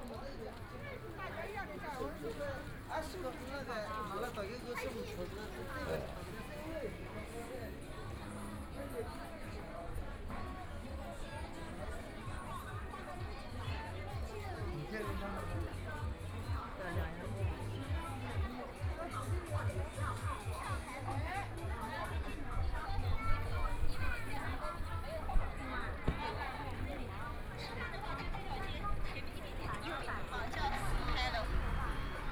Fangbang Road, Shanghai - walking in the street
Line through a variety of shops, Traffic Sound, Walking inside the old neighborhoods, Binaural recording, Zoom H6+ Soundman OKM II